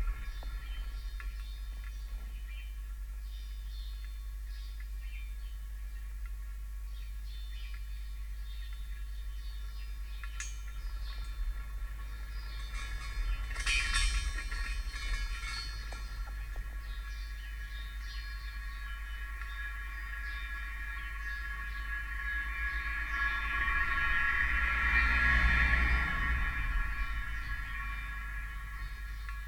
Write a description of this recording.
2 x 1500litre rain water harvesting tanks, one hydrophone in each, house sparrows, vehicles drive past on the lane. Stereo pair Jez Riley French hydrophones + SoundDevicesMixPre3